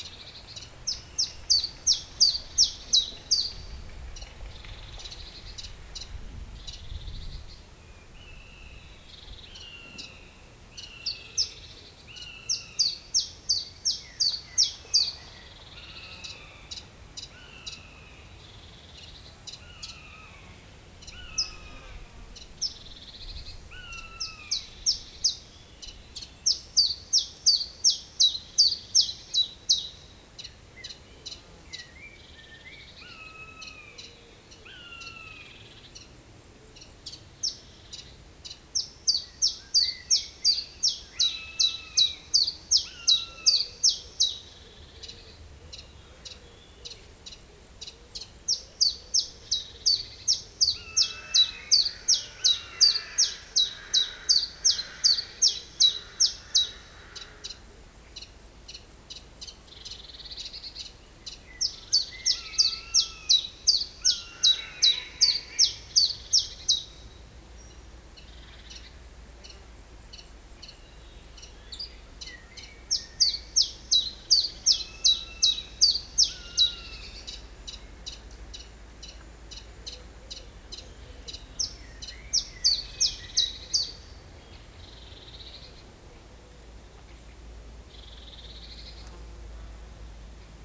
{
  "title": "Genappe, Belgique - Common Chiffchaff",
  "date": "2017-07-16 14:50:00",
  "description": "Near a pond, the song of a Common Chiffchaff, a very common bird here. It's a lovely bird singing during spring and summer times. You can also hear a pony hardly coughing three times, and at the backyard, these birds [french name and english name] :\nPouillot véloce (Common Chiffchaff) - tou tou ti tou tou ti\nMerle (Blackbird)\nPoule d'eau (Common Moorhen)\nColvert (Mallard)\nBuse variable (Common Buzzard)\nCorneille (Carrion Crow).",
  "latitude": "50.65",
  "longitude": "4.52",
  "altitude": "92",
  "timezone": "Europe/Brussels"
}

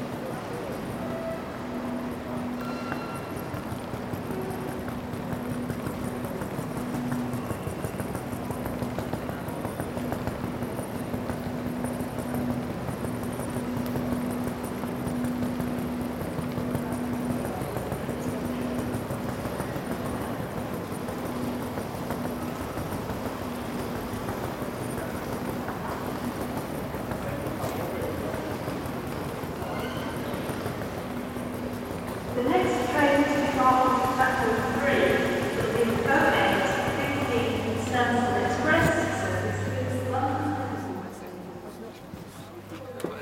{
  "title": "london stansted, rail station",
  "description": "recorded july 18, 2008.",
  "latitude": "51.89",
  "longitude": "0.26",
  "altitude": "103",
  "timezone": "GMT+1"
}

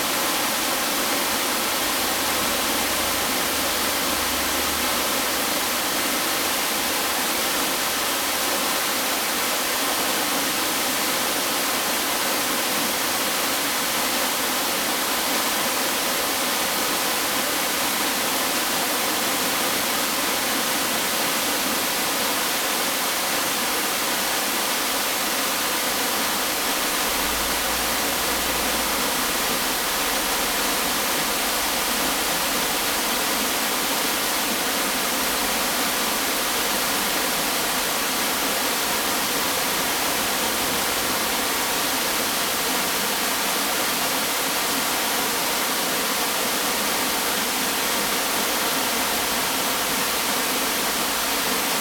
{
  "title": "眉溪, 觀音瀑布, 蜈蚣里Puli Township - waterfalls",
  "date": "2016-12-13 12:59:00",
  "description": "waterfalls\nZoom H2n MS+XY +Sptial Audio",
  "latitude": "23.99",
  "longitude": "121.03",
  "altitude": "646",
  "timezone": "Europe/Berlin"
}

